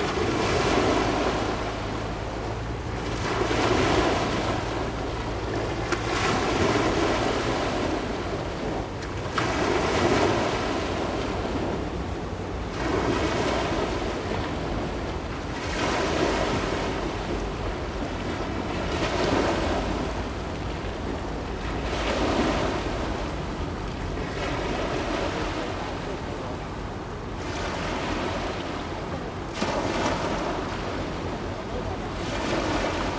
{
  "title": "Sec., Zhongzheng Rd., Tamsui Dist.新北市 - the river sound",
  "date": "2016-08-24 18:10:00",
  "description": "The sound of the river, traffic sound, Small pier\nZoom H2n MS+XY +Spatial audio",
  "latitude": "25.18",
  "longitude": "121.43",
  "altitude": "4",
  "timezone": "Asia/Taipei"
}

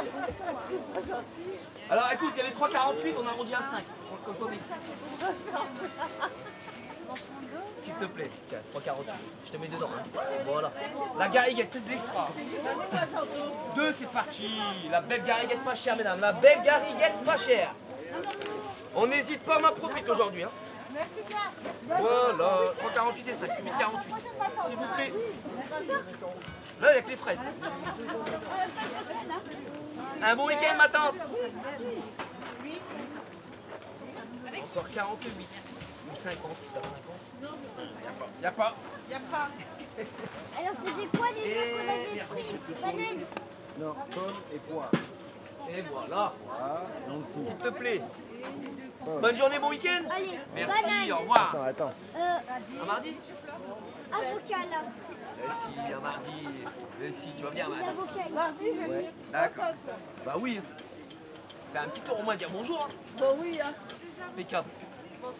{
  "title": "Pl. du Maréchal Foch, Saint-Omer, France - Marché de St-Omer",
  "date": "2022-03-26 10:00:00",
  "description": "St-Omer\nAmbiance du marché du samedi matin\nles fruits et légumes.",
  "latitude": "50.75",
  "longitude": "2.25",
  "altitude": "22",
  "timezone": "Europe/Paris"
}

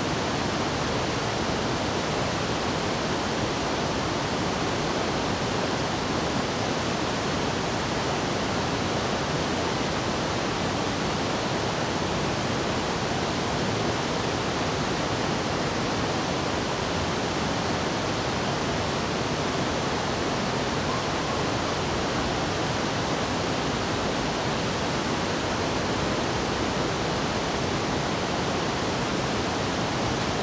{
  "title": "Wulai Waterfall, 烏來區烏來里 - Facing the Waterfall",
  "date": "2016-12-05 09:24:00",
  "description": "Facing the waterfall, Traffic sound\nZoom H2n MS+ XY",
  "latitude": "24.85",
  "longitude": "121.55",
  "altitude": "182",
  "timezone": "GMT+1"
}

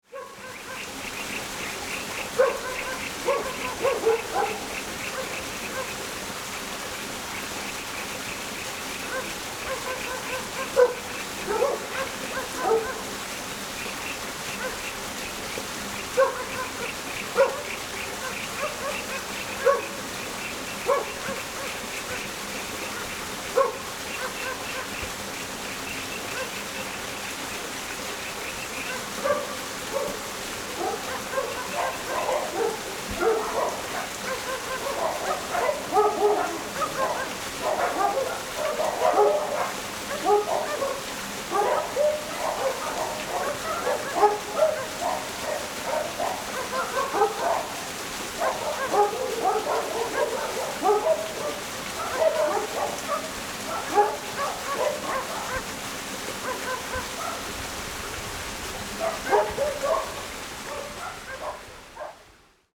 {
  "title": "Qingyun Rd., Tucheng Dist., New Taipei City - Dogs and the streams",
  "date": "2012-02-16 16:37:00",
  "description": "The sound of water streams, Dogs barking, Birds singing\nZoom H4n +Rode NT4",
  "latitude": "24.96",
  "longitude": "121.47",
  "altitude": "96",
  "timezone": "Asia/Taipei"
}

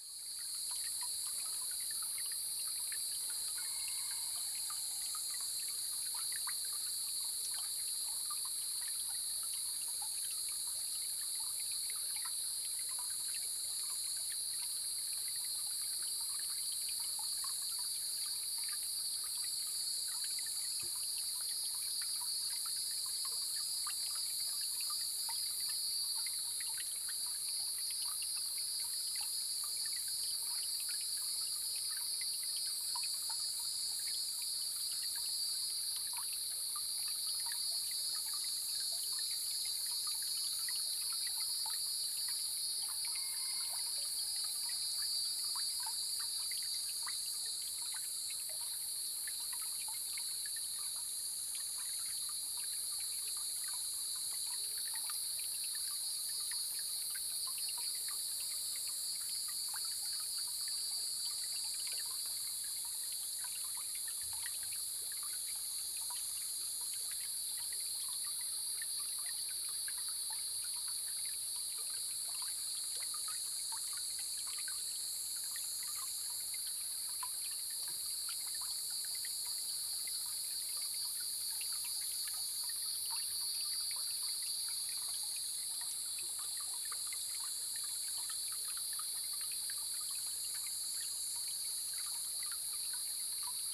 {
  "title": "成功里, Puli Township, Nantou County - the sound of water droplets",
  "date": "2016-07-13 06:01:00",
  "description": "the sound of water droplets, Cicadas sound\nZoom H2n",
  "latitude": "23.96",
  "longitude": "120.89",
  "altitude": "454",
  "timezone": "Asia/Taipei"
}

